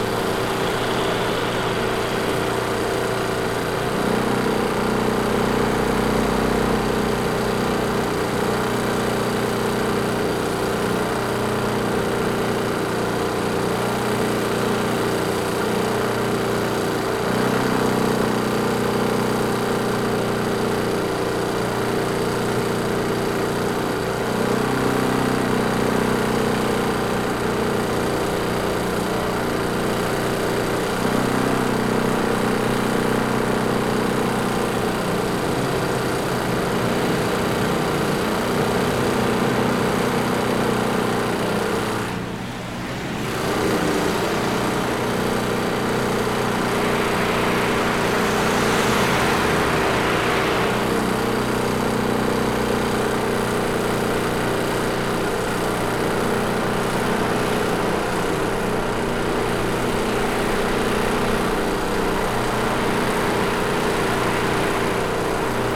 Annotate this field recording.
A loud portable electric generator in front of a "Maxima" store + traffic sounds. Recorded with ZOOM H5.